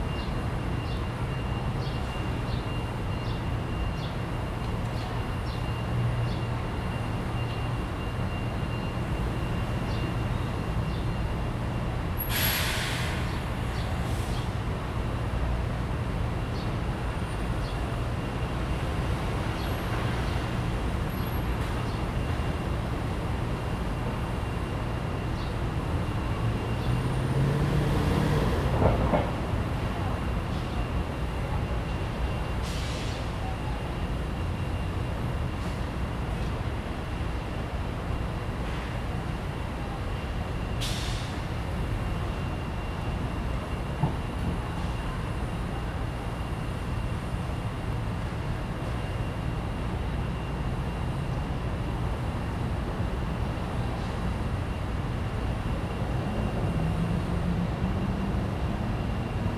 Liugongjun Park, Taipei City - in the Park
in the park, Hot weather, Bird calls, Construction noise
Zoom H2n MS+XY
Da’an District, Taipei City, Taiwan, 18 June, ~17:00